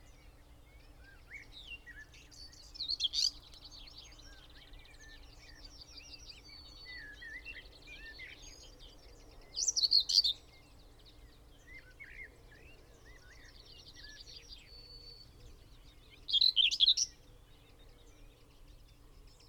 Green Ln, Malton, UK - whitethroat singing down a hedgerow ...
whitethroat singing down a hedgerow ... lavalier mics clipped to a bush ... bird sings from its song post ... moves away down the hedgerow and then returns numerous times ... bird call ... song from ... blackbird ... song thrush ... linnet ... willow warbler ... yellowhammer ... wren ... pheasant ... crow ... wood pigeon ... some background noise ...